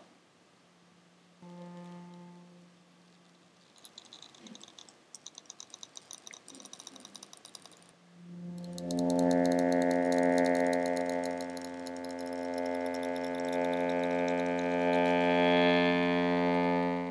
Aldeia da Mata Pequena
Hohner Erica 3